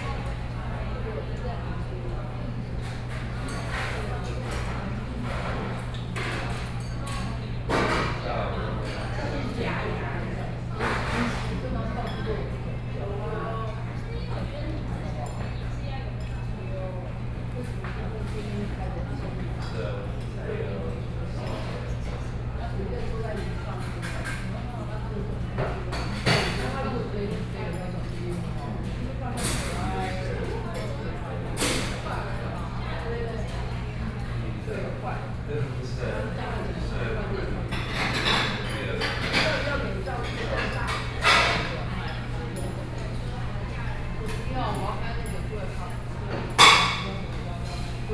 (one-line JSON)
{"title": "Taipei - In the restaurant", "date": "2013-08-18 15:35:00", "description": "In the restaurant, Sony PCM D50 + Soundman OKM II", "latitude": "25.02", "longitude": "121.53", "altitude": "21", "timezone": "Asia/Taipei"}